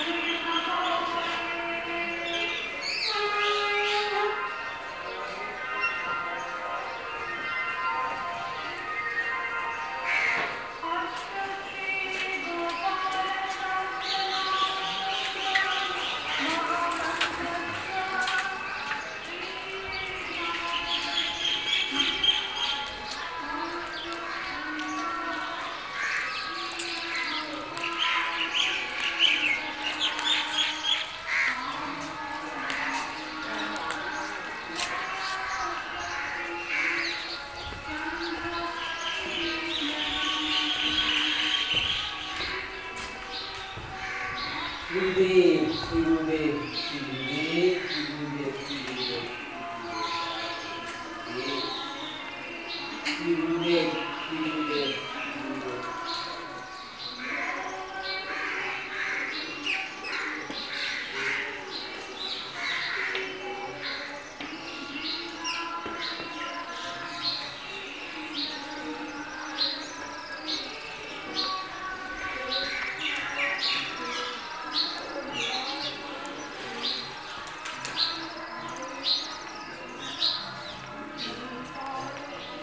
{"title": "Parikrama Marg, Keshi Ghat, Vrindavan, Uttar Pradesh, Indien - at night in Vrindavan", "date": "1996-03-04 22:30:00", "description": "a magical night in Vrindavan, staying on the roof of a small temple and enjoy listening ..recorded with a sony dat and early OKM mics.", "latitude": "27.59", "longitude": "77.70", "altitude": "179", "timezone": "Asia/Kolkata"}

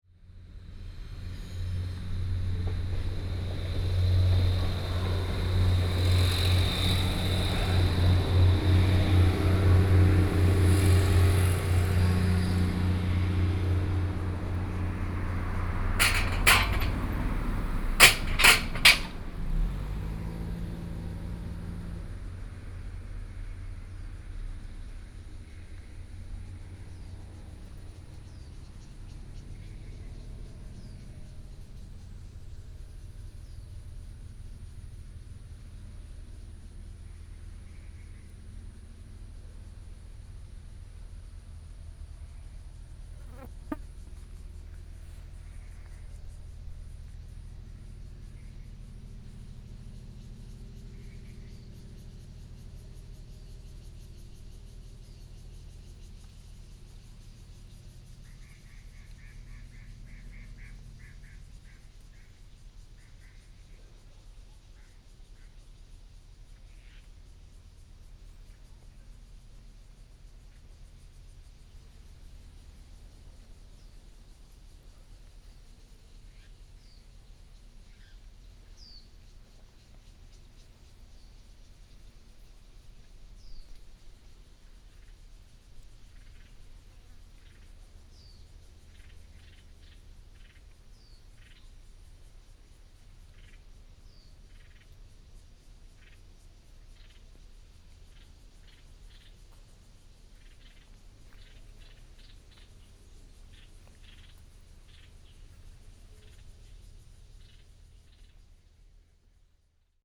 {"title": "大王村, Taimali Township - Birdsong", "date": "2014-09-05 09:43:00", "description": "Near the railroad tracks, Train traveling through .Birdsong .Small village", "latitude": "22.61", "longitude": "121.00", "altitude": "44", "timezone": "Asia/Taipei"}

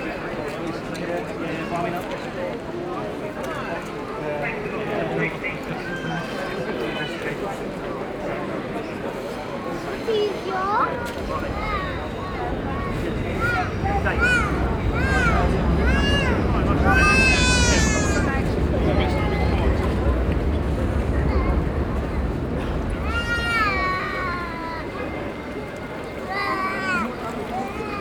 {"title": "Brixton Market, London, UK - Memorial for a trader", "date": "2008-01-19 17:20:00", "description": "A memorial for a trader who was killed at his stall in Brixton market\nfrom: Seven City Soundscapes", "latitude": "51.46", "longitude": "-0.11", "altitude": "16", "timezone": "Europe/London"}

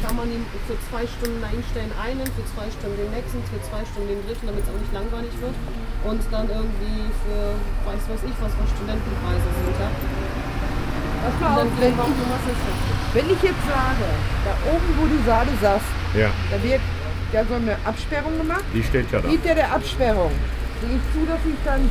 {"title": "Martin-Luther-Platz, Hamm, Germany - Rundgang durchs Lutherviertel (7)", "date": "2014-08-18 19:48:00", "description": "Letzte Station des Rundgangs. Ende der Vereins\"Sitzung\".\nlast take of the guided tour. end of the meeting.\nmore infos:\nrecordings are archived at:", "latitude": "51.68", "longitude": "7.82", "altitude": "65", "timezone": "Europe/Berlin"}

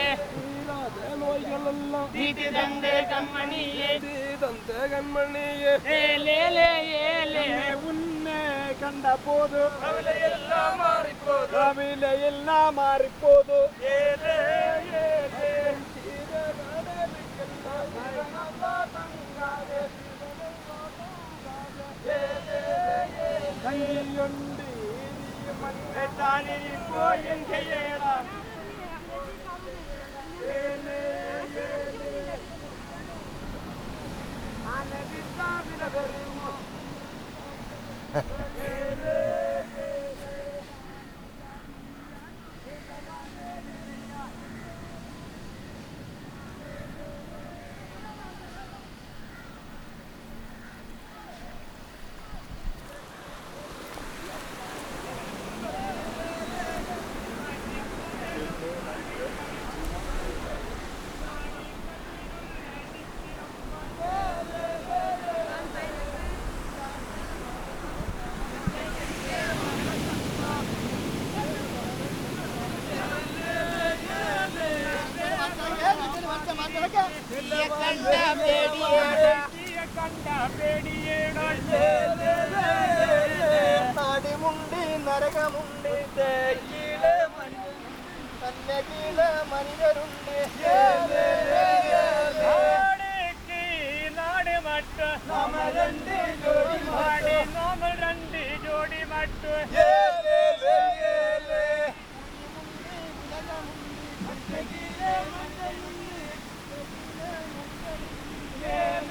{"title": "Light House Beach Rd, Kovalam, Kerala, India - fishermen pulling net ashore", "date": "2001-08-28 15:48:00", "description": "fishermen pulling their catch ashore while singing to sustain the hard labour", "latitude": "8.39", "longitude": "76.98", "altitude": "14", "timezone": "Asia/Kolkata"}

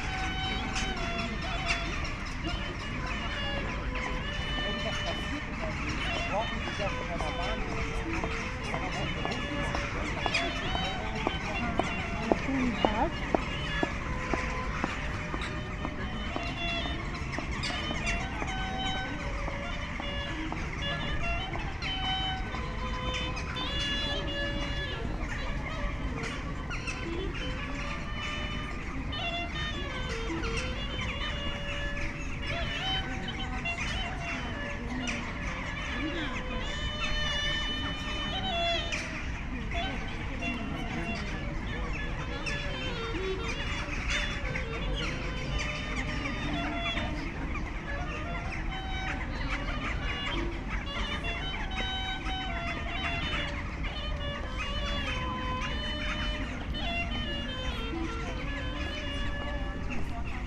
{"title": "M566+FQ Pristina - streetmusic clarinet", "date": "2022-02-24 19:18:00", "description": "Crows and a wonderful street musician (clarinet) occupy the acoustic space in a section of the pedestrian zone", "latitude": "42.66", "longitude": "21.16", "altitude": "597", "timezone": "Europe/Belgrade"}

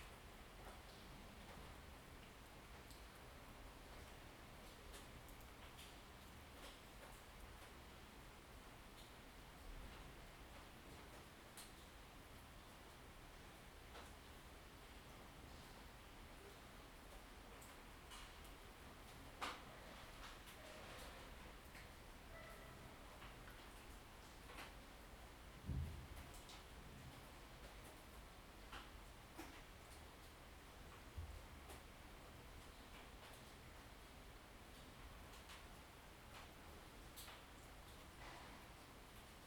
{"title": "Via Bernardino Galliari, Torino TO, Italia - Inside at Noon with open windows in the time of COVID19 Soundscape", "date": "2020-05-13 11:45:00", "description": "\"Inside at Noon with open windows in the time of COVID19\" Soundscape\nChapter LXXV of Ascolto il tuo cuore, città. I listen to your heart, city\nWednesday May 1”th 2020. Fixed position in the very centre of my apartment at San Salvario district with all windows open, Turin, sixty four days after (but day ten of Phase II) emergency disposition due to the epidemic of COVID19.\nStart at 11:42 a.m. end at 00:10 p.m. duration of recording 27’45”", "latitude": "45.06", "longitude": "7.69", "altitude": "245", "timezone": "Europe/Rome"}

